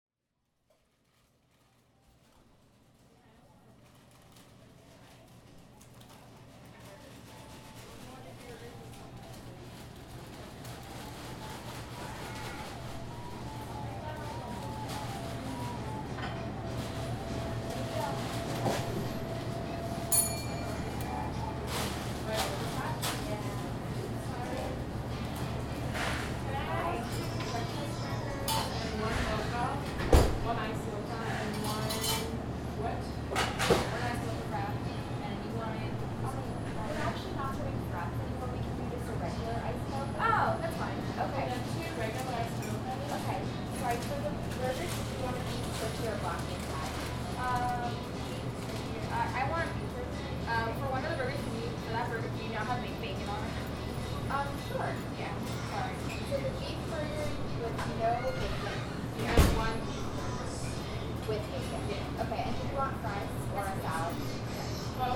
Bolton Hill, Baltimore, MD, USA - Cafe Tea Time
Recording at a cafe called On The Hill with a Zoom H4n Recorder